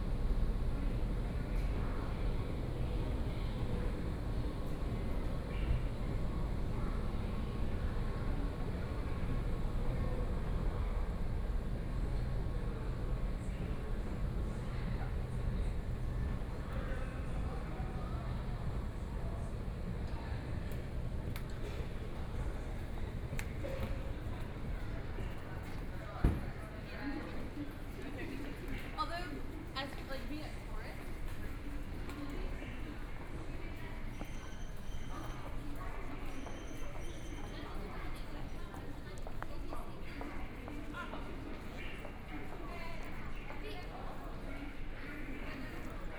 Arrival voice inside in front of the station, Walking inside the station, Binaural recordings, Zoom H6+ Soundman OKM II
1 December 2013, 21:46